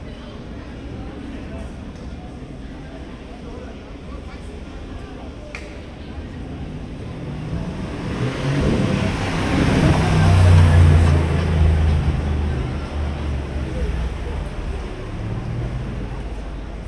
Brabanter Str., Köln, Deutschland - Summer night with rogue fireworks
City street, summer night, people talking, car traffic, cafés, and some people having their (most probably unauthorised) private fireworks. Recorded with Zoom H3-VR, converted to Binaural - use headphones.
Nordrhein-Westfalen, Deutschland